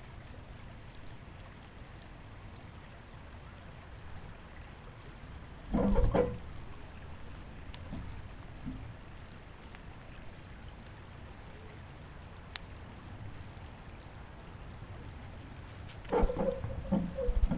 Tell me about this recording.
Crocus Lane, Avon, Connecticut, Sounds of a Bird Feeder. Mainly red cardinals. by Carlo Patrão